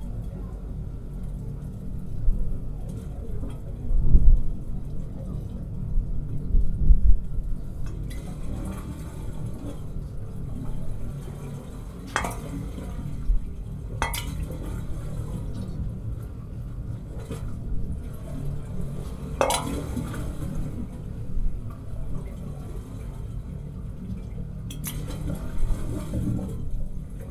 Marina District, San Francisco, CA, USA - Wave Organ #1
Wave Organ in the Marina in San Francisco, CA
13 October 2014